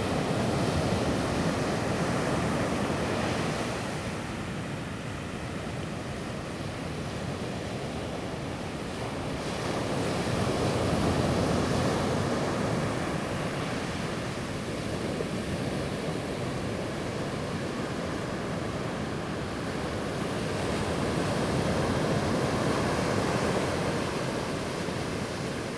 竹安里, Toucheng Township, Yilan County - Sound of the waves
Sound of the waves, On the beach
Zoom H2n MS+XY